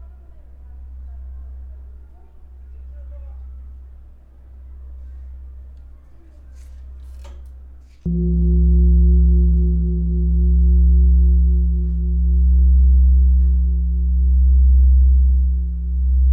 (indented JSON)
{
  "title": "Disturbing the Peace",
  "date": "2017-12-09 14:00:00",
  "description": "Ringing the great bronze bell at the Demilitarized Zone Peace Park...for 10,000won myself and Alfred 23 Harth rang the bell for peace on the peninsular...the great resonant sound traveling North over the border as a gesture of longing",
  "latitude": "37.89",
  "longitude": "126.74",
  "altitude": "15",
  "timezone": "Asia/Seoul"
}